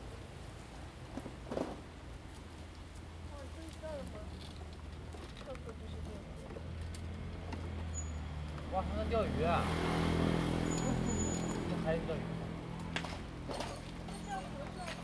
南福村, Hsiao Liouciou Island - Small village
Small village, Park in front of the village, Traffic Sound, Sound of the waves
Zoom H2n MS+XY